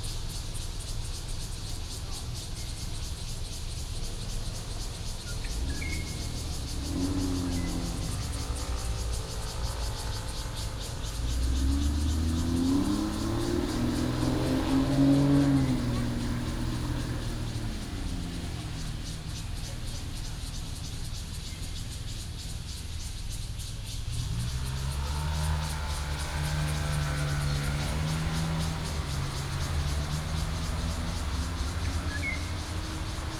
Longxing Rd., Zhongli Dist. - Birds and Cicada
Birds and Cicada sound, At the corner of the road, Traffic sound
Zhongli District, Taoyuan City, Taiwan, 10 July, 16:53